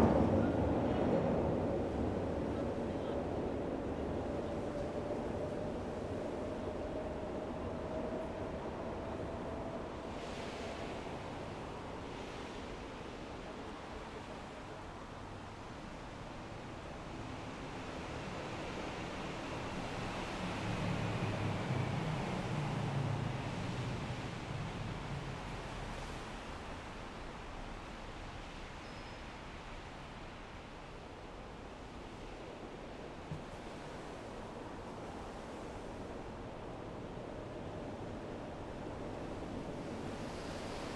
Norrmalm, Stockholm - Trains, cars and water
Trens, cotxes i aigua sota el pont.
Trains, cars and water under the bridge.
Trenes, coches y agua debajo del puente.